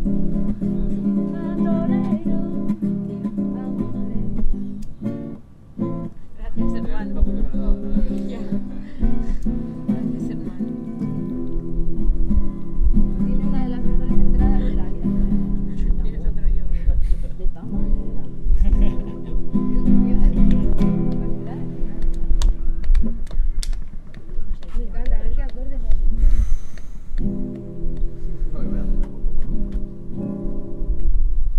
Leioa bellas artes campa ambiente giroa
Friday, december 11th... musical environment in the field of the faculty of fine arts.